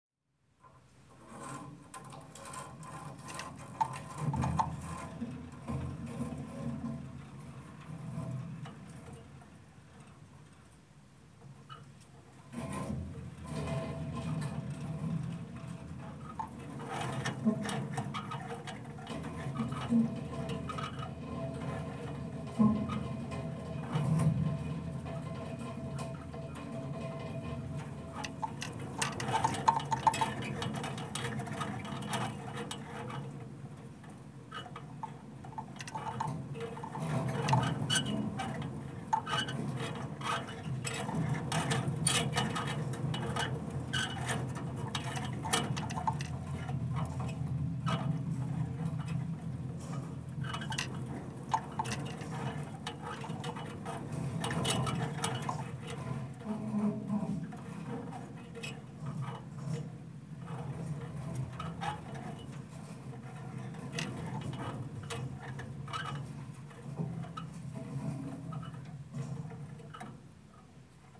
bed frame installation, Istanbul
installation made from junk found at an abandoned house on Bugazada. Object were moved in the space by Muharrem and John.
Gönüllü Cd, Burgazada, Turkey, 22 February, 15:12